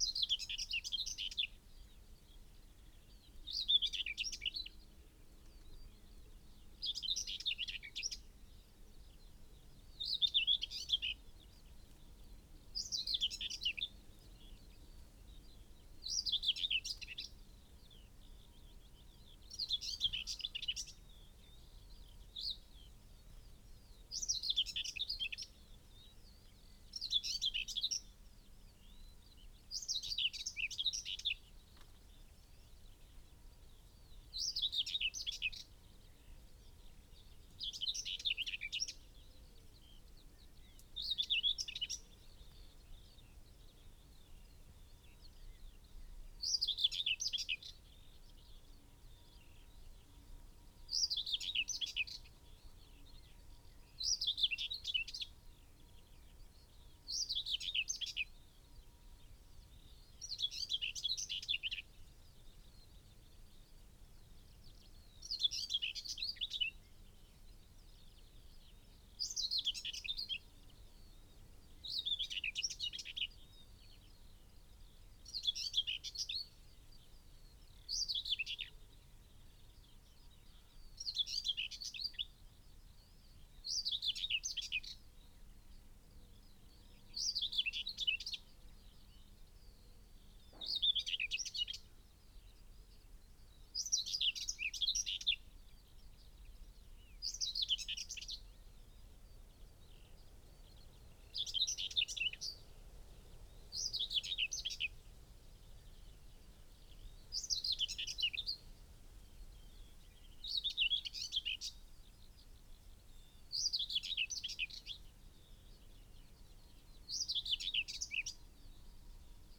Malton, UK - whitethroat song soundscape ...
whitethroat song soundscape ... dpa 4060s clipped to bag to zoom h5 ... bird calls ... song ... from ... yellowhammer ... blackbird ... linnet ... crow ... wren ... dunnock ... chaffinch ... blackcap ... wood pigeon ... possible nest in proximity as song and calls ... male visits various song posts before returning ... occasional song flight ... unattended time edited extended recording ...
England, United Kingdom